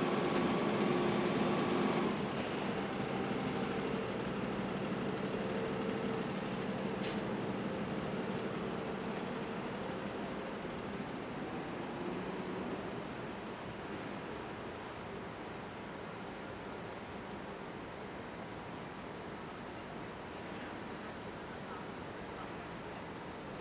{"title": "E 43rd St, New York, NY, USA - Sound of a car being towed", "date": "2022-08-16 15:20:00", "description": "Sound of a car being towed.", "latitude": "40.75", "longitude": "-73.97", "altitude": "18", "timezone": "America/New_York"}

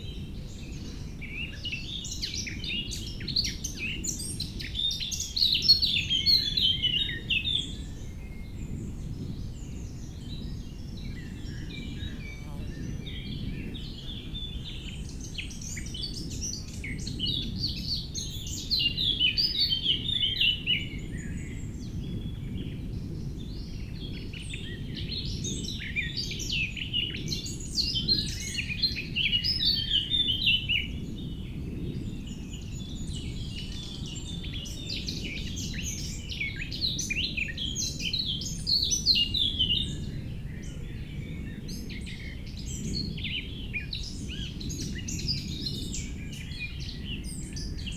{"title": "Lovagny, France - Eurasian Blackcap", "date": "2017-06-11 08:50:00", "description": "An eurasian blackcap, so lovely bird, singing loudly in a path of the small village of Lovagny.", "latitude": "45.90", "longitude": "6.02", "altitude": "461", "timezone": "Europe/Paris"}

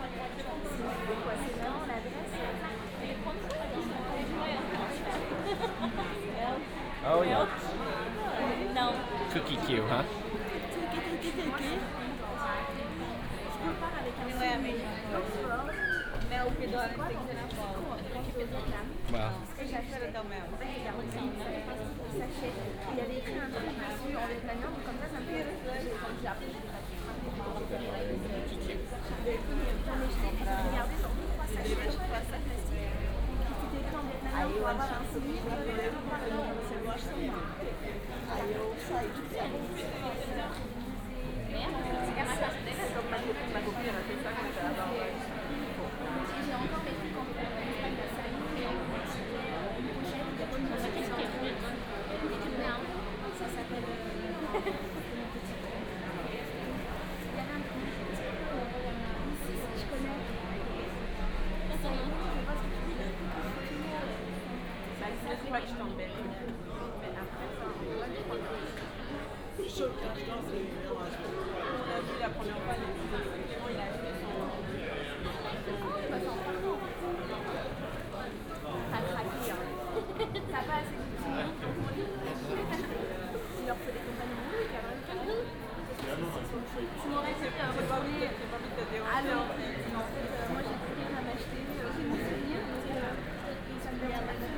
{"title": "Covered Markets, Oxford - Ben's Cookies stand", "date": "2014-03-15 16:30:00", "description": "waiting in the queue for Ben's cookies\n(Sony D50, OKM2)", "latitude": "51.75", "longitude": "-1.26", "altitude": "72", "timezone": "Europe/London"}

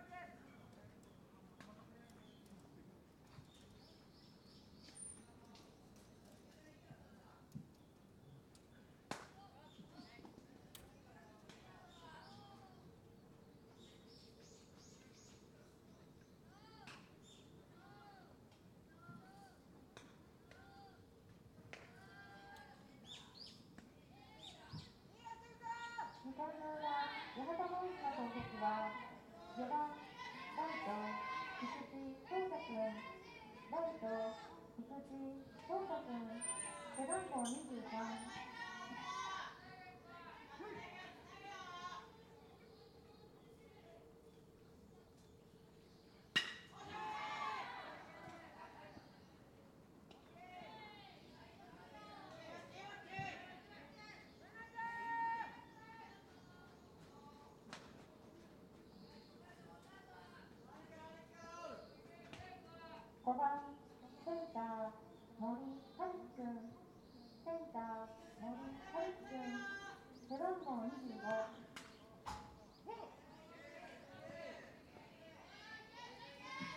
{"title": "Anse, Wakamatsu Ward, Kitakyushu, Fukuoka, Japan - High School Baseball Practice", "date": "2022-05-15 13:30:00", "description": "Sunday baseball practice in the Wakamatsu industrial area.", "latitude": "33.92", "longitude": "130.81", "altitude": "2", "timezone": "Asia/Tokyo"}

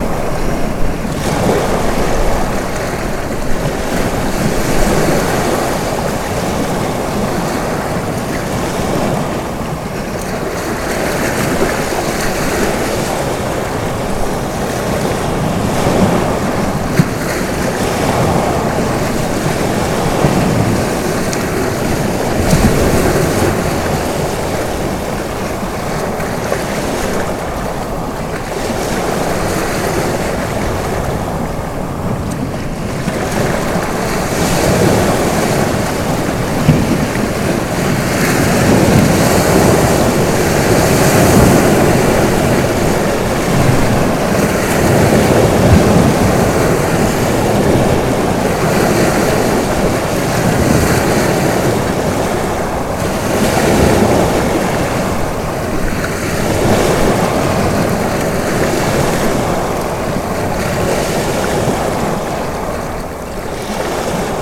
{"title": "Sälsten, Härnösand, Sverige - a windy day by the sea", "date": "2020-09-18 14:49:00", "description": "Recorded on a windy day by the sea, Sälsten, Härnösand. The recording was made with two omnidirectional microphones", "latitude": "62.65", "longitude": "17.97", "timezone": "Europe/Stockholm"}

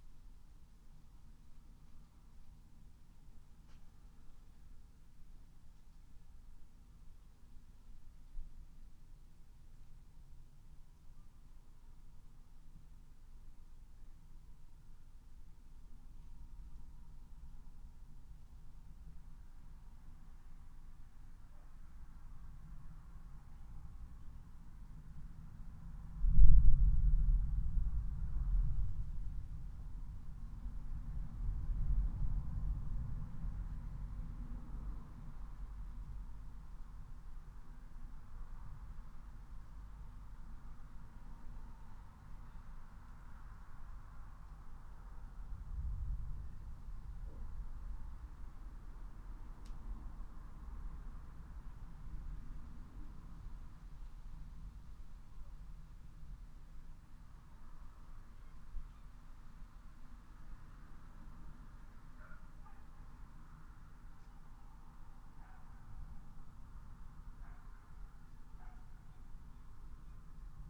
{"title": "Luttons, UK - thunderstorm approaching ...", "date": "2022-09-07 19:30:00", "description": "thunderstorm approaching ... xlr sass to zoom h5 ... bird song ... calls ... wood pigeon ... house martin ... tawny owl ... robin ... background noise ... traffic ...", "latitude": "54.12", "longitude": "-0.54", "altitude": "76", "timezone": "Europe/London"}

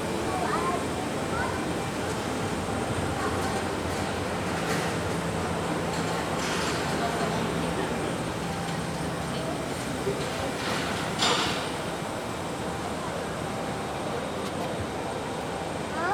{"title": "EuroAirport Basel-Mulhouse-Freiburg - observation deck", "date": "2014-09-14 14:19:00", "description": "(binaural) ambience on the observation deck at the airport.", "latitude": "47.60", "longitude": "7.53", "altitude": "266", "timezone": "Europe/Paris"}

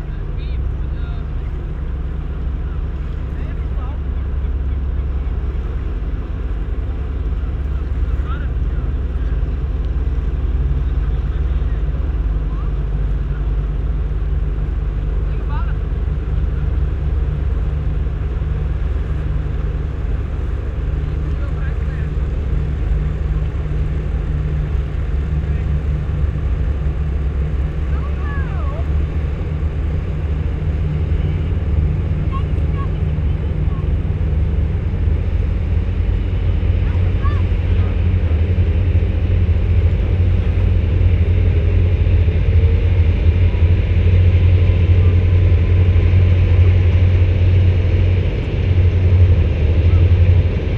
{"title": "urk: staverse kade - the city, the country & me: opposite industrial harbour", "date": "2013-06-11 19:12:00", "description": "tank ship manoeuvring in the harbour\nthe city, the country & me: june 11, 2013", "latitude": "52.66", "longitude": "5.60", "timezone": "Europe/Amsterdam"}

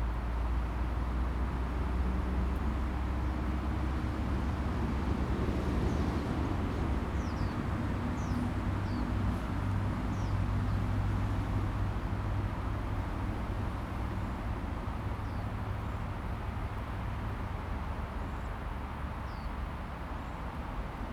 {"title": "喜南里, South Dist., Tainan City - Windbreak forest", "date": "2017-02-18 12:24:00", "description": "Windbreak forest, Traffic sound, Casuarina equisetifolia\nZoom H2n MS+ XY", "latitude": "22.94", "longitude": "120.18", "altitude": "2", "timezone": "GMT+1"}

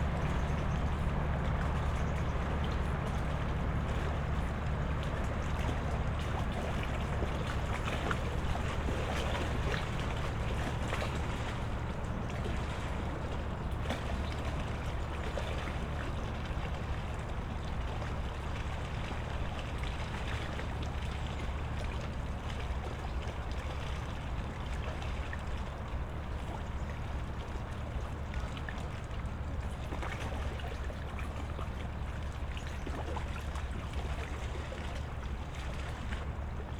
{"title": "Britzer Verbindungs-Kanal, Baumschulenweg, Berlin - ship passing, engine drone", "date": "2013-08-17 11:35:00", "description": "canal (Britzer Verbindungskanal), cargo ship passing, engine drone, waves\n(SD702, Audio Technica BP4025)", "latitude": "52.46", "longitude": "13.48", "altitude": "31", "timezone": "Europe/Berlin"}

